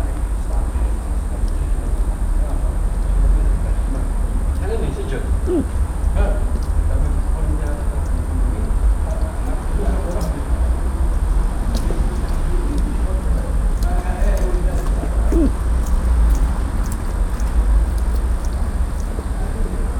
Back from a bar, drank a few beers and Ive got hiccups.
The streetlight makes me think of an insect.
PCM-M10, internal microphones.
10 January 2012, ~19:00